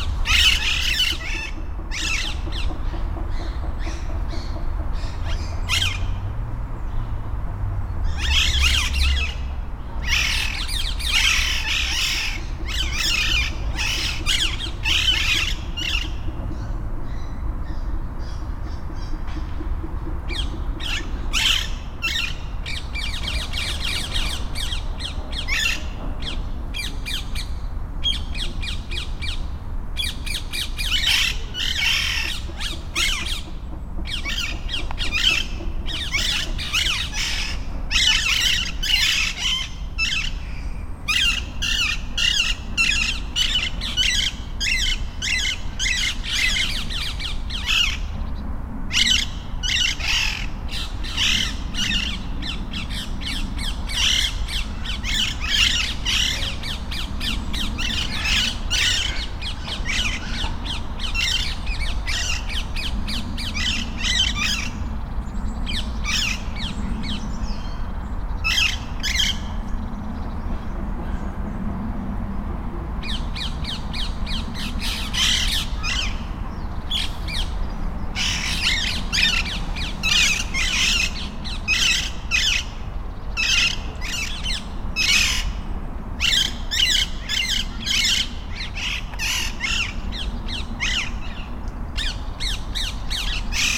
Cologne, Botanischer Garten/Flora, Deutschland - Parrot chat
A flock of free living, green parrots gets together on a tree in the botanical garden having a vivid chat. In the background construction works and traffic noise.
Cologne, Germany, 30 October